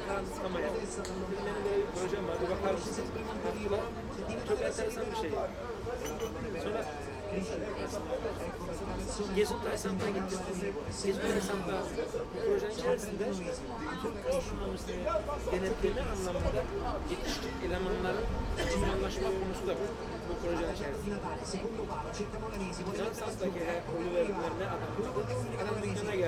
koeln, luebecker str, italian bar - outside, public viewing
waiting for dinner (spaghetti carbonara). world championship on every tv in town.